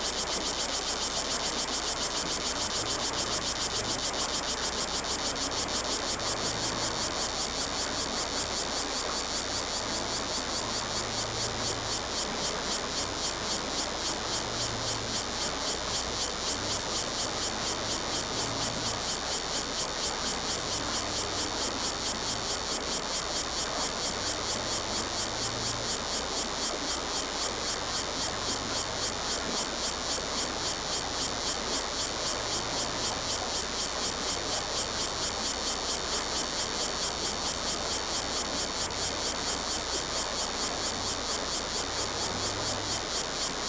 Cicadas sound, Traffic Sound, Agricultural irrigation waterway, Lawn mower
Zoom H2n MS+ XY
Taitung County, Taiwan, 7 September 2014, ~10:00